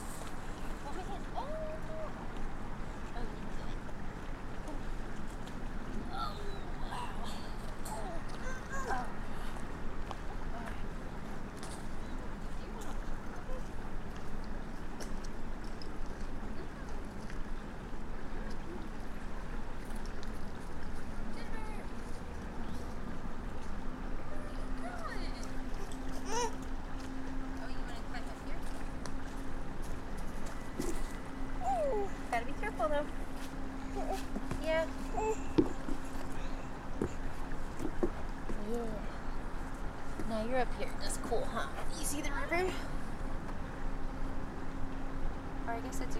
Wetlands area and elevated boardwalk, Heritage Park Trail, Smyrna, GA, USA - Next to the creek
A recording from a small observation platform overlooking Nickajack Creek. The mics were tied around a wooden support facing towards the creek. You can hear the faint sound of water as people walk the trail. A child moves in close to the recording rig, but thankfully nothing is disturbed.
[Tascam DR-100mkiii w/ Primo EM-272 omni mics]